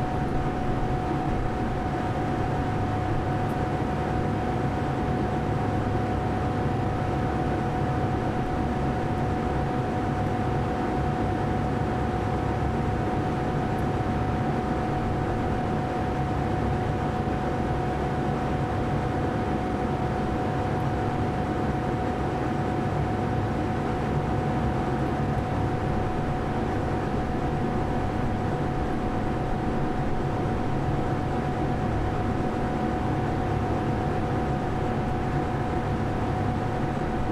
place-roof
recording-H4N Handy Recorder
situation-sound pickup from the noise of the air conditioner
techniques-stereophonic pickup
Caldas da Rainha, Portugal, 28 February 2014, 14:30